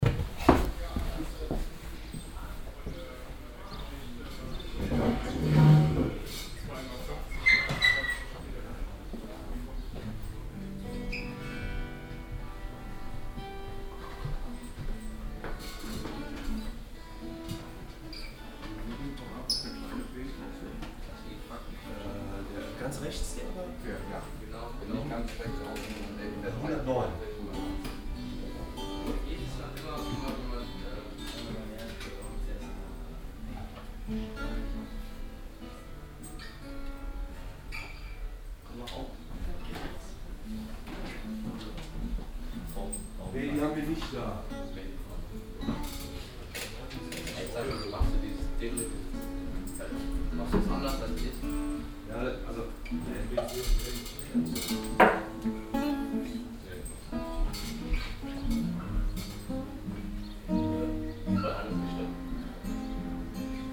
cologne, grosse budengasse, music store - akust-gitarren abt.
musik geschäft abteilung akustische gitarrren - mittags
soundmap nrw: social ambiences/ listen to the people - in & outdoor nearfield recordings, listen to the people
8 July 2008